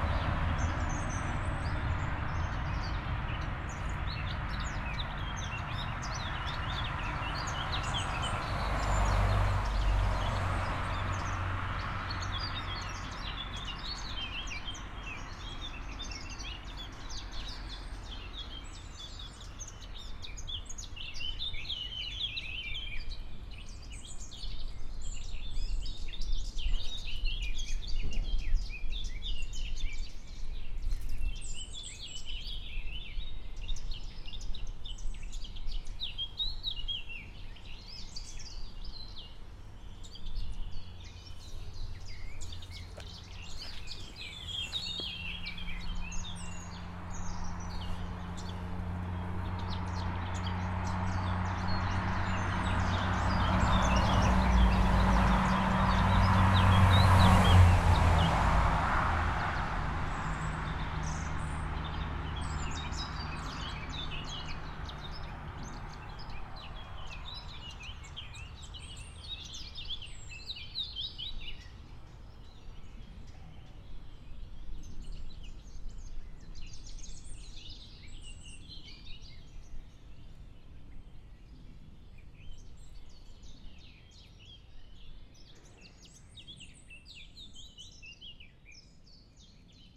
Ponte di Legno sul Livergon, Località Santa Giustina, Schio VI, Italia - a bridge between the woods and the traffic
a bridge between the woods and the traffic: the recording of a short spring morning walk.